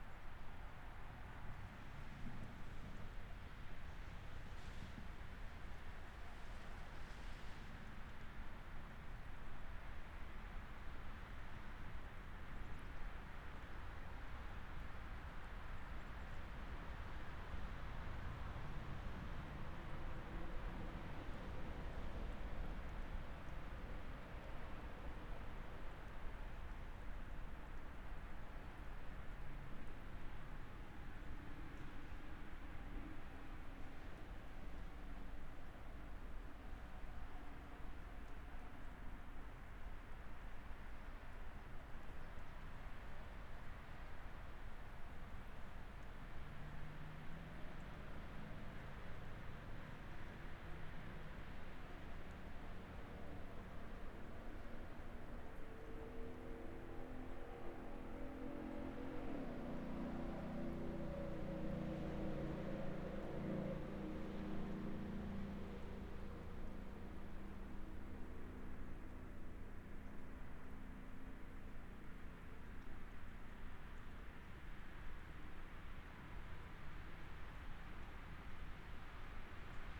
Bald Eagle Regional Park, County Rd, White Bear Township, MN, USA - Bald Eagle Regional Park
Ambient sounds of the parking lot of the Bald Eagle Regional park. Road noise from nearby Highway 61, some birds, and vehicles coming into the parking lot can be heard.
Recorded using a Zoom H5